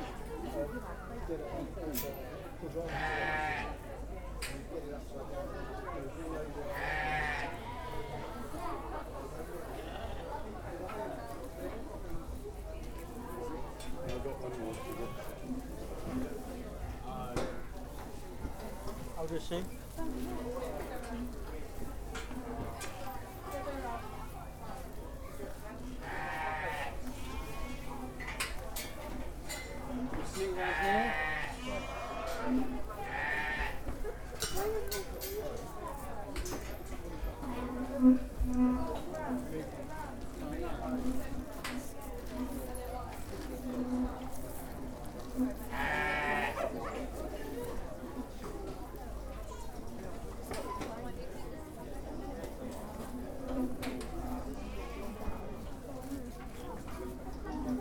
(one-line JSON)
{"title": "Broad Oak, Heathfield, UK - Heathfield Show Sheep Tent", "date": "2017-05-27 13:15:00", "description": "The Heathfield Show is held in this field at Tottingworth Farm, Broad Oak every year. This recording is in the Sheep Tent where sheep belonging to local sheep breeders and farmers are judged. Hand held Tascam DR-05 with wind muff.", "latitude": "50.98", "longitude": "0.29", "altitude": "169", "timezone": "Europe/London"}